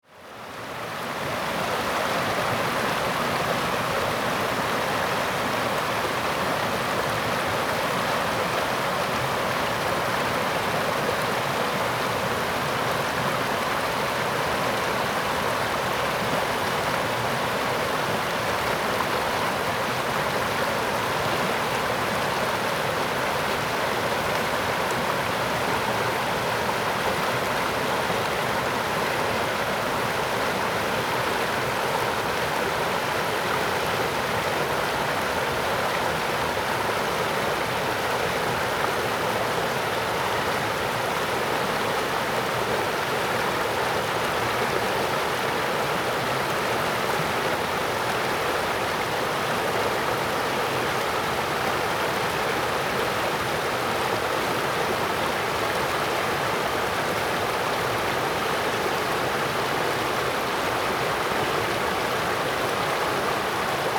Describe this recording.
Streams, Zoom H2n MS+XY +Sptial Audio